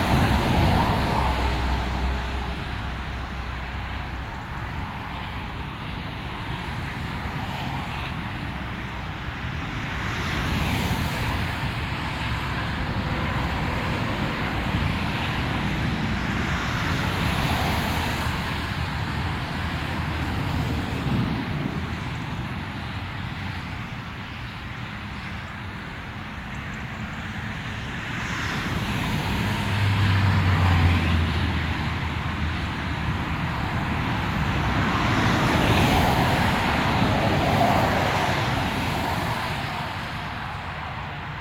Shap, Penrith, UK - M6 motorway
M6 motorway in the rain. Zoom H2n